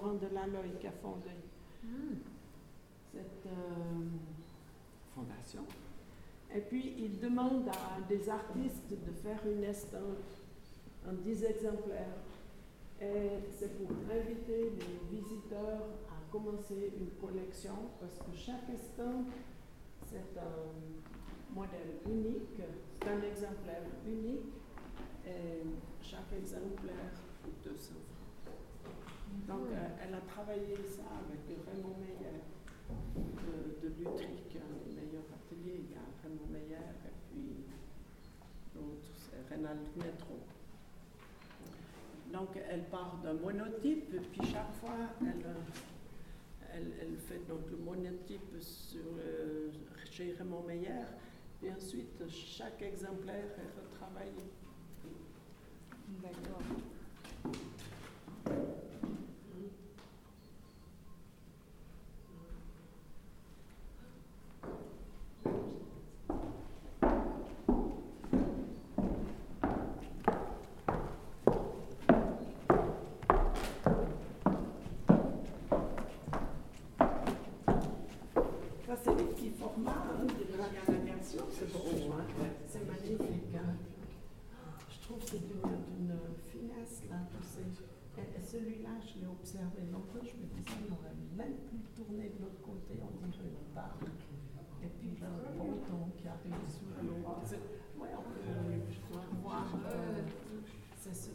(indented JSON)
{
  "title": "Espace culturel Assens, Rundgang Räume",
  "date": "2011-10-02 14:49:00",
  "description": "Espace culturel Assens, Ausstellungen zeitgenössischer Kunst, Architektur",
  "latitude": "46.61",
  "longitude": "6.63",
  "altitude": "646",
  "timezone": "Europe/Zurich"
}